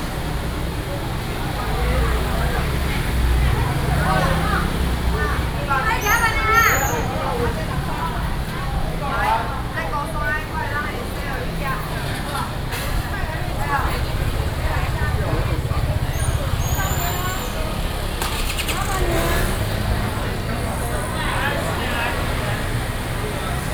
Ln., Sec., Bao’an St., Shulin Dist., New Taipei City - in the traditional market
in the traditional market, Cicada sounds, Traffic Sound
Sony PCM D50+ Soundman OKM II